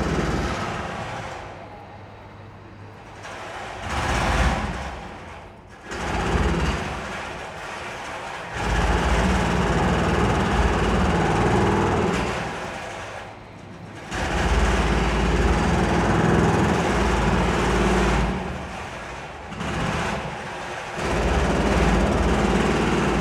Extraodinarily loud building site, Queen Victoria St, London, UK - Extraordinarily loud building site amplified by the narrow passage
The narrow passageway between the site and the City of London School amplifies the drilling and demolition sounds to even higher levels.
England, United Kingdom, 17 May 2022